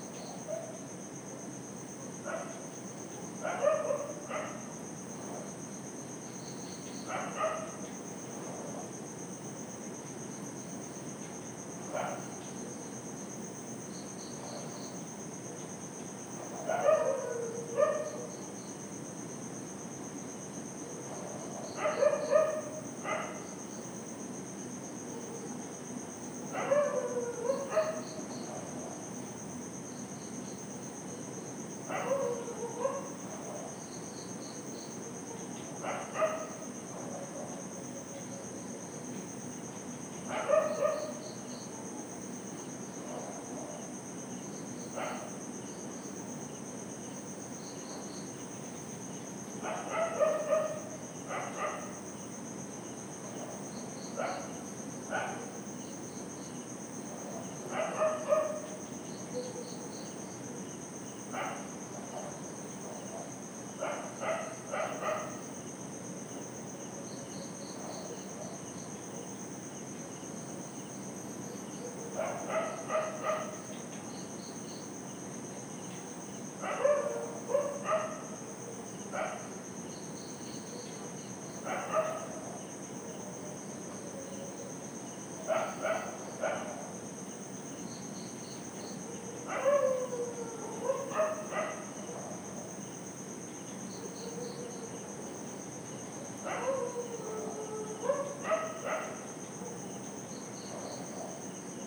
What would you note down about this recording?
Sleepless night recordings - dogs barking, cicadas etc...